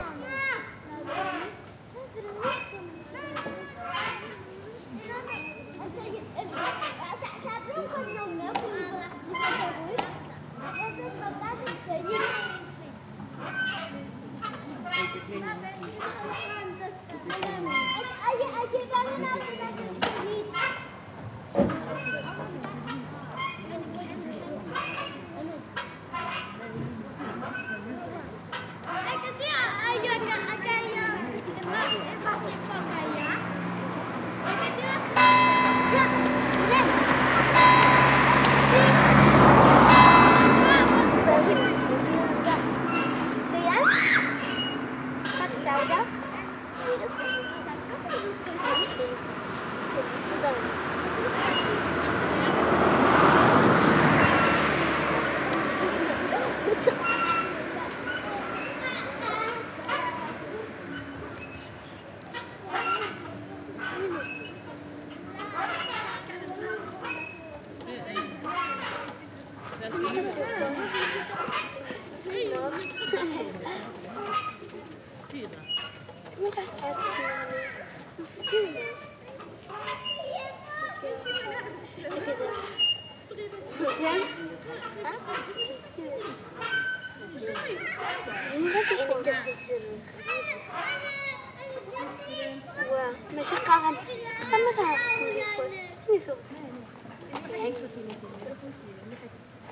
borrar - Niños en el parque
Niños de origen marroquí juegan al atardecer en los columpios del parque infantil junto a la carretera. WLD
St Bartomeu del Grau, Spain, 17 July, 21:45